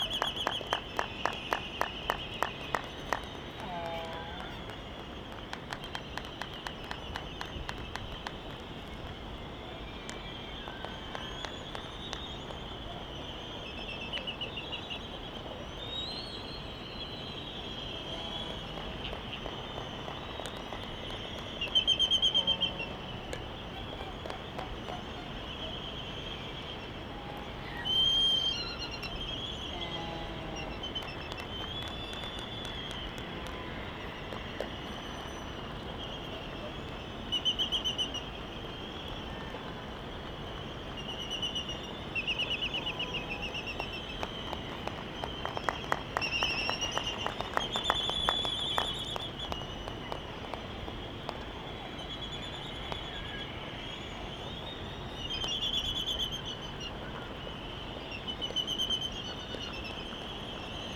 United States Minor Outlying Islands - Laysan albatross dancing ...
Laysan albatross dancing ... Sand Island ... Midway Atoll ... calls and bill clapperings ... open Sony ECM 959 one point stereo mic to Sony Minidisk ... warm ... sunny ... blustery morning ...